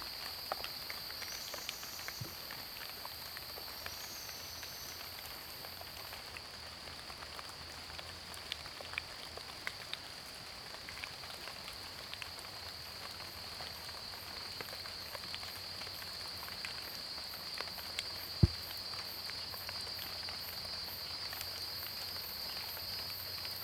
In the bamboo forest, Raindrop sound, Cicadas cries, Many leaves on the ground
Zoom H2n MS+XY